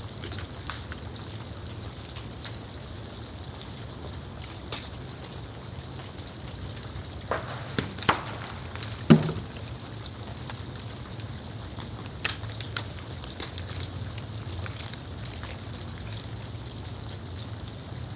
Kozákov the Czech Republic - Melting of icicle decoration under the noon sun.
My first recording this year. The icicles covering the wall of the Kozakov quarry are slowly melting under the power of noon sun, they brake and fall down.
Radostná pod Kozákovem, Czech Republic, 1 January 2013, 12:05